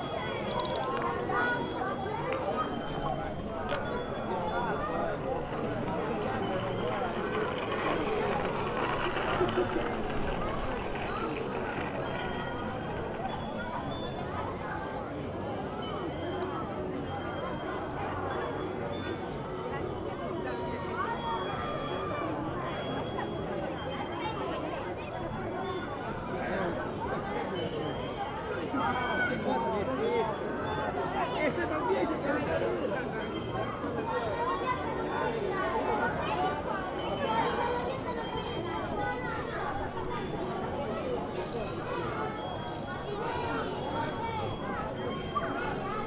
taormina, piazza IX.aprile. busy place, even at night and outside the tourist season. great sights from here, 200m above sea level. sound of plastic toys running around, bar music, children playing.
25 October 2009, ~21:00